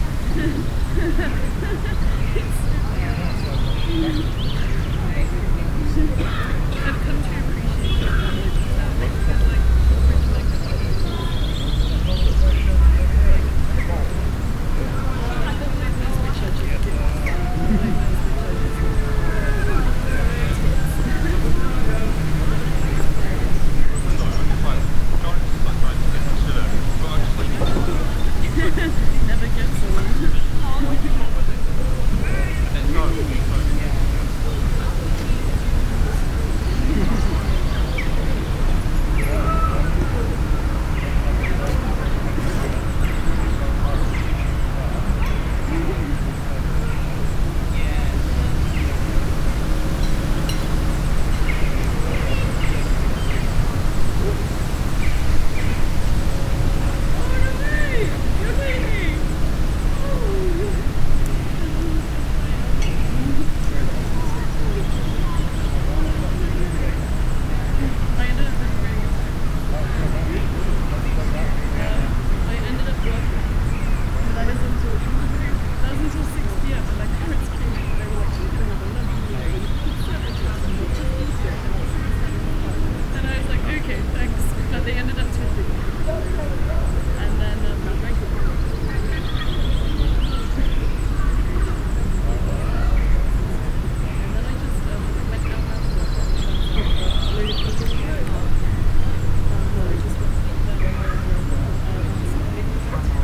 Experimental ambient of a public park. By laying the microphones on the ground under the bench I was using sound from quite a distance seems to have been picked up. Recorded with a Sound devices Mix Pre 3 and 2 Beyer lavaliers.